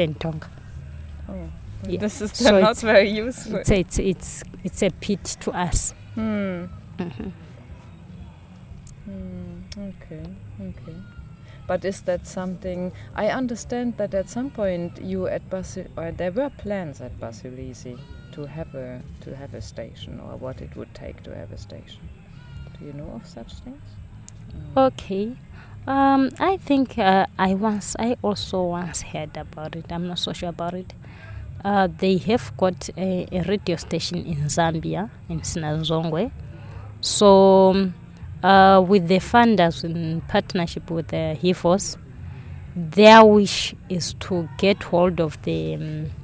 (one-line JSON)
{"title": "Binga Craft Centre, Zimbabwe - Linda Mudimba – writing for the Tonga people….", "date": "2012-11-08 16:44:00", "description": "At the time of this interview, Linda is working as a National Volunteer with the Basilwizi Trust and she tells here about her work with the Media Clubs based in local schools and Basilwizi’s newsletter. Linda wants to become a journalist, to gain the skills and position of representing the Tonga people and their culture in Zimbabwe and beyond. Her vision is to establish a newspaper in ChiTonga….\nThe entire interview with Linda is archived here:", "latitude": "-17.62", "longitude": "27.34", "altitude": "609", "timezone": "Africa/Harare"}